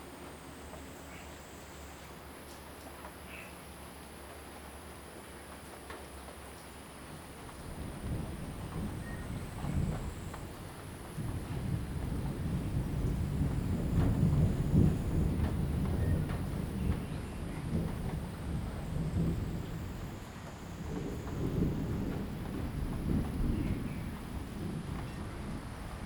Rainy Day, Insect sounds, Frog calls
Zoom H2n MS+XY
Nantou County, Taiwan, August 2015